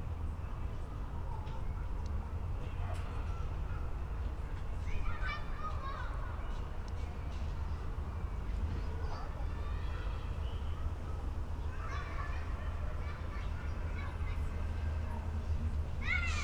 Bruno-Apitz-Straße, Berlin Buch, Deutschland - residential area, Sunday evening ambience
Berlin Buch, residential area (Plattenbau), inner yard, domestic sounds, some magpies, dogs, kids playing, Sunday early evening ambience in late Summer
(Sony PCM D50, Primo EM272)